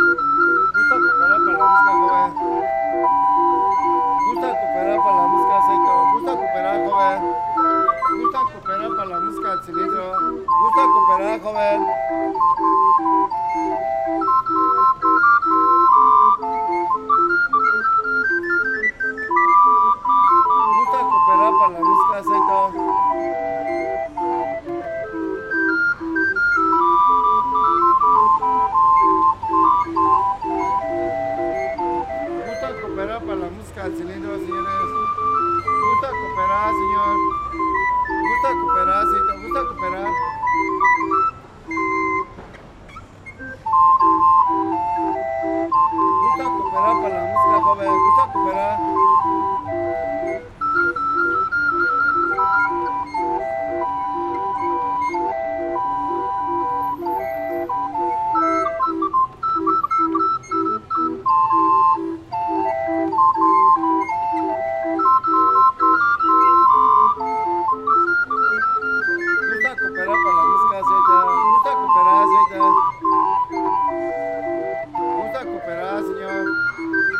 de Mayo, Centro histórico de Puebla, Puebla, Pue., Mexique - Puebla - Mexique
Puebla - Mexique
Ambiance sonore à l'entrée de la rue 5 de Mayo
Puebla, México, September 2019